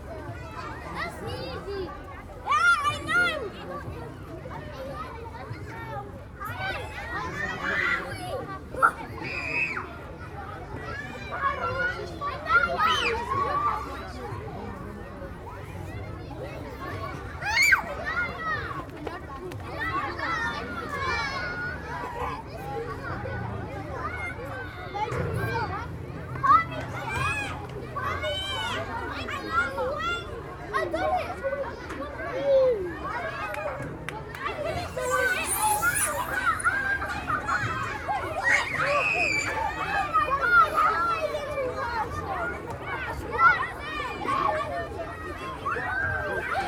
Kids playing after school.
19°C
16 km/hr 230
England, United Kingdom, 30 March 2021, 15:41